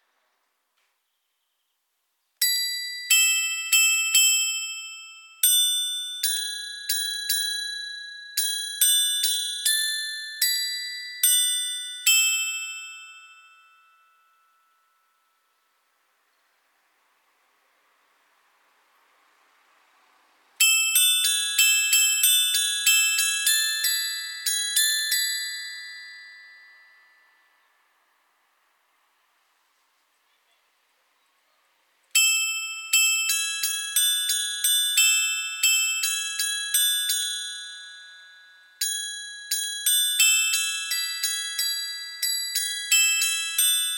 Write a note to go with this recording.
Brebières (Pas-de-Calais), Carillon de l'hôtel de ville (en extérieur sur la façade), Suite de ritournelles automatisées.